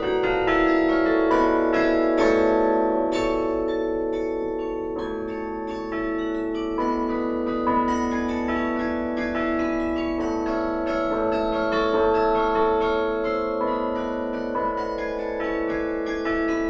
Deinze, Belgique - Deinze carillon
The very great Deinze carillon, played by Charles Dairay. It's a special instrument, sounding like a children's toy. It's because these are special bells, rare and astonishing : major bells.